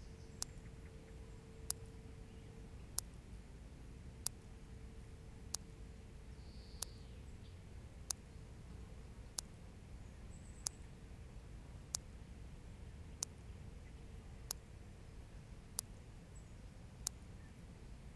Saint-Martin-de-Nigelles, France - Electric fence
Closing a big pasture with horses, an electric fence makes tic tic tic.